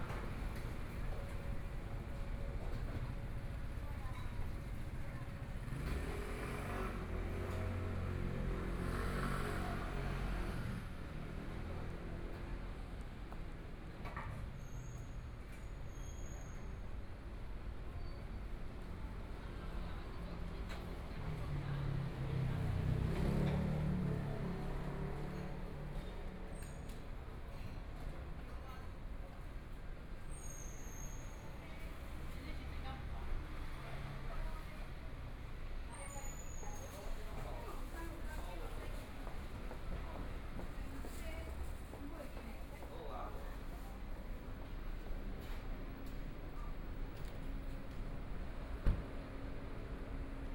Tianjin St., Zhongzheng Dist. - walking in the Street
soundwalk, Traffic Sound, from Chang'an E. Rd. to Nanjing E. Rd., Binaural recordings, Zoom H4n+ Soundman OKM II
Taipei City, Taiwan, 20 January 2014, 13:58